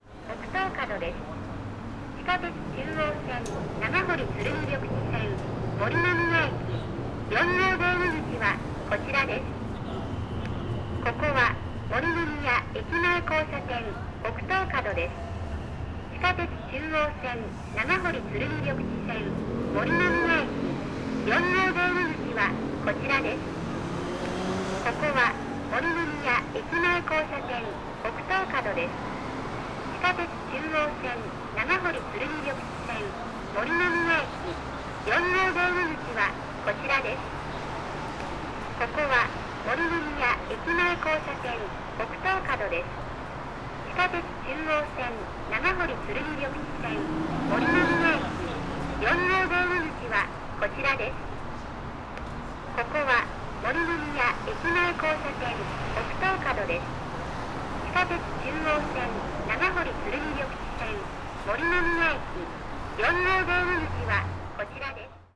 Osaka, Morinomiya subway station entrance - speaker announcement
voice recorded from a small, gritty speaker attached above stair.
南河内郡 (Minamikawachi District), 近畿 (Kinki Region), 日本 (Japan), 30 April 2013, 19:12